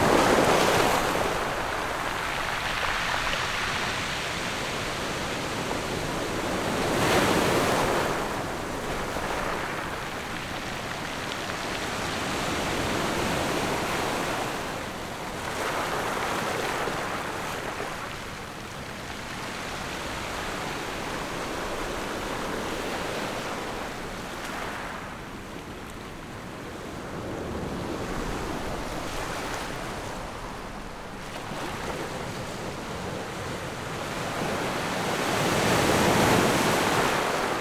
Jizazalay, Ponso no Tao - Small fishing port
Waves and tides, Small fishing port
Zoom H6 + Rode NT4